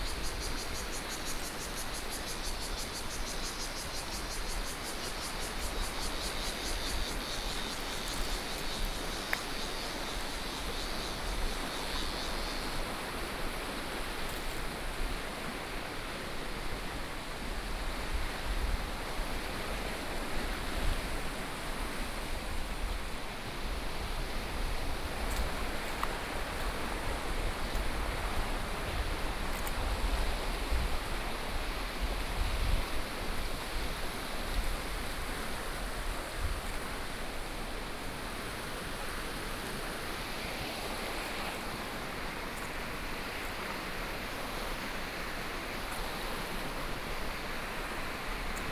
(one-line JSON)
{"title": "Guanshan Township, Taitung County - Walking along the stream", "date": "2014-09-07 11:13:00", "description": "Walking along the stream, Cicadas sound, Traffic Sound, Small towns", "latitude": "23.05", "longitude": "121.17", "altitude": "222", "timezone": "Asia/Taipei"}